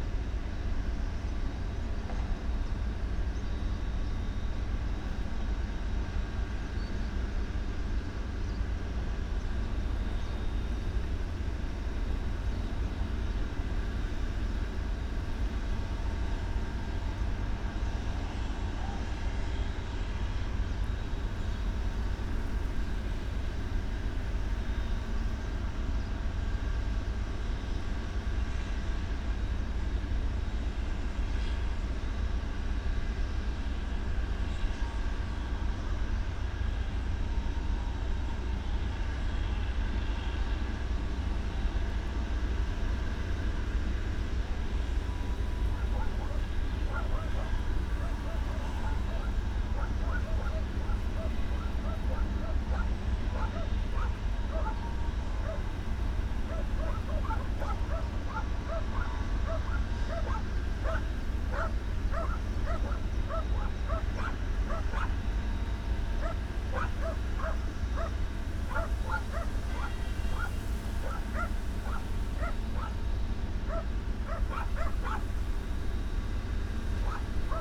Delimara, Marsaxlokk, Malta - Delimara power station hum
above Delimara power station, Delimara / Marsaxlokk, Malta. Power station at work, hum
(SD702 DPA4060)